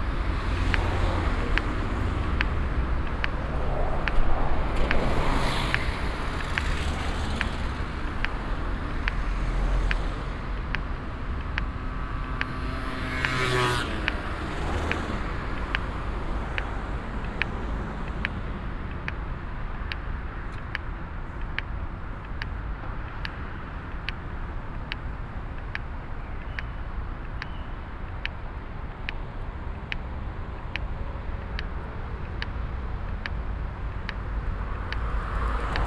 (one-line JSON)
{"title": "essen, freiheit, clicking traffic signs", "date": "2011-06-09 22:27:00", "description": "A clicking row of traffic signs beating the traffic noise\nProjekt - Klangpromenade Essen - topographic field recordings and social ambiences", "latitude": "51.45", "longitude": "7.01", "altitude": "87", "timezone": "Europe/Berlin"}